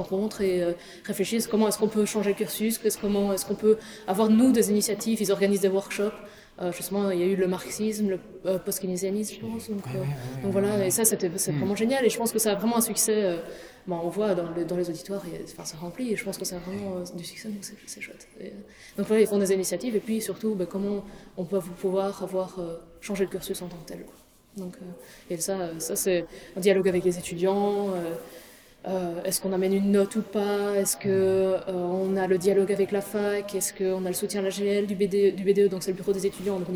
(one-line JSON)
{"title": "Centre, Ottignies-Louvain-la-Neuve, Belgique - Social elections", "date": "2016-03-24 14:10:00", "description": "Hélène Jané-Aluja is the main representative of a social list called Cactus Awakens. This list defends students rights nearby the rector. Hélène describes in great details the list belief, and her personal involvement. Interview was made in a vast auditoire with reverb, it wasn't easy ! As she explains, there's no place to talk without a beer and a free-access social local would be useful.", "latitude": "50.67", "longitude": "4.61", "altitude": "115", "timezone": "Europe/Brussels"}